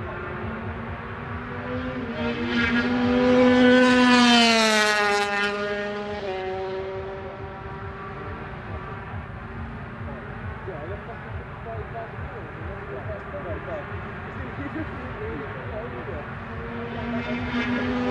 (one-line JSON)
{"title": "Castle Donington, UK - British Motorcycle Grand Prix 2002 ... 125 ...", "date": "2002-07-12 13:15:00", "description": "British Motorcycle Grand Prix ... 125 qualifying ... one point stereo mic to minidisk ... commentary ... a young Danny Pedrosa with a second on the grid ..?", "latitude": "52.83", "longitude": "-1.37", "altitude": "81", "timezone": "Europe/London"}